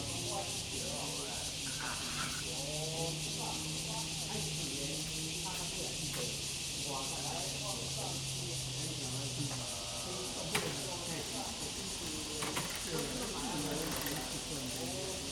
Fuyang St., Da’an Dist., Taipei City - at the park entrance
at the park entrance, Many elderly people are doing aerobics, Traffic Sound, Cicadas cry
Zoom H2n MS+XY